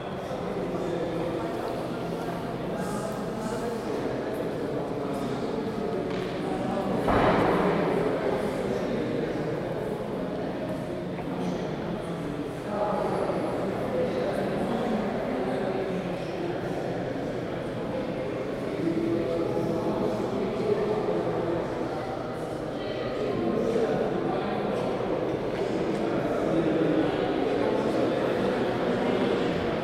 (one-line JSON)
{"title": "Tate Britain - Stairs Featuring David Tremlett", "date": "2017-06-15 12:25:00", "description": "Recorded halfway up the stairs that feature the David Tremlett artwork. At about 01:45 there is the sound of a helicopter flying overhead. The louder noise towards the end is the sound of a refreshments trolly being wheeled past the entrance to the Queer British Art 1861 - 1967 exhibition. Recorded on a Zoom H2n.", "latitude": "51.49", "longitude": "-0.13", "altitude": "10", "timezone": "Europe/London"}